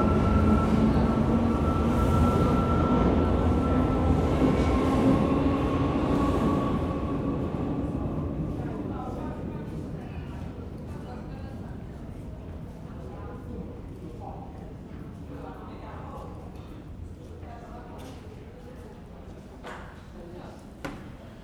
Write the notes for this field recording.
I guess these whistling sounds are caused by train created winds blowing through something flute-like in the tunnel. It's impossible to see but it does correlate with the trains entering and leaving the station. Somewhat eerie. Nobody else seems to pay it any attention though.